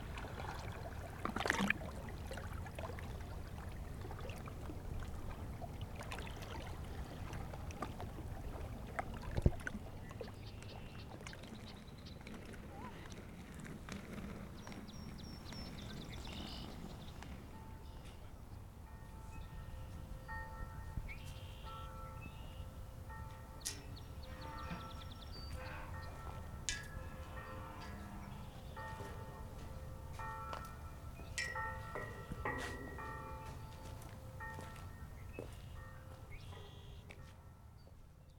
Montreal: Lachine Canal: la grande jetee - Lachine Canal: la grande jetee
Condensed from a soundwalk and ride to the end of the big jetty, formerly used for waiting cargo ships. It is now Parc Rene Levesque, an extension of the bicycle path to the mouth of the Lachine harbour, by the rapids.